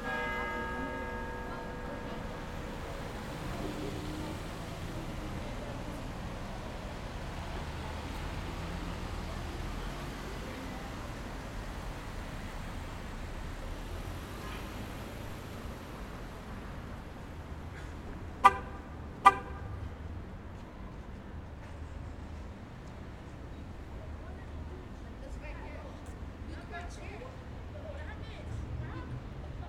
Catalpa Ave, Ridgewood, NY, USA - Catalpa Avenue
Catalpa Avenue, Ridgewood: Church bells and street sounds.